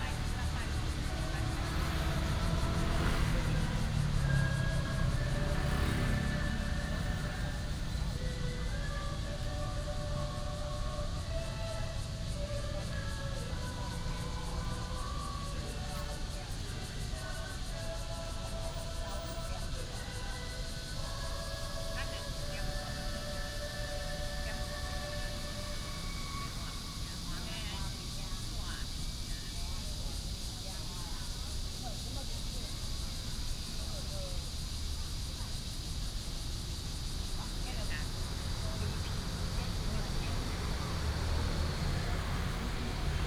宋屋公園, Pingzhen Dist. - Next to the park
Next to the park, Cicada cry, birds sound, traffic sound, Selling vegetables and women
Pingzhen District, Taoyuan City, Taiwan, 28 July, 08:11